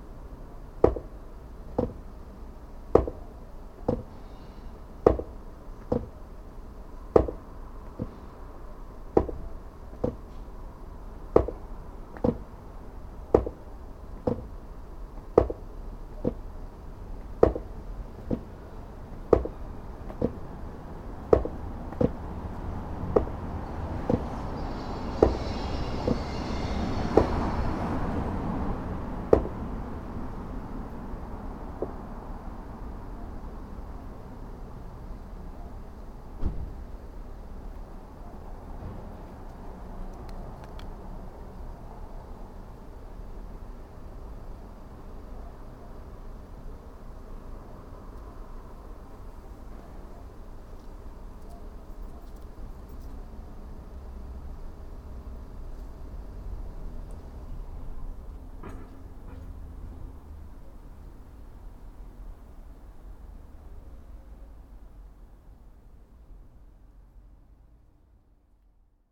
Albany Street, Edinburgh, Edinburgh, UK - Playing the railings and bouncing on the paving stones
19 Albany Street was another address at which Catherine Hogarth lived in Edinburgh as a young girl. I went to stand outside this building, to listen to its ambiences and atmospheres, to play its railings, and to hear the sounds that are present now. Obviously, there is much traffic. However fortuitously there is also a loose paving stone on which one can invent mischievous percussion, and also the sound of some glass recycling being done elsewhere further down the street...